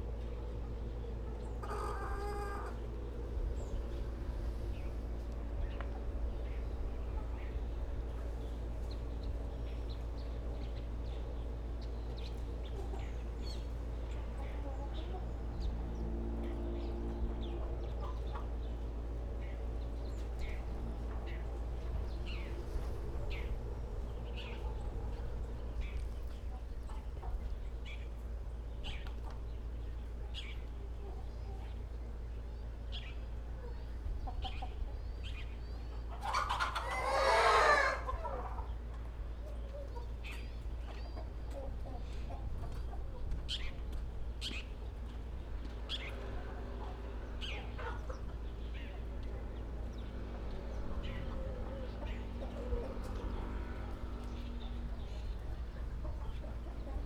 坂里村, Beigan Township - In coop
In coop, Chicken sounds
Zoom H6+Rode NT4
15 October, ~12:00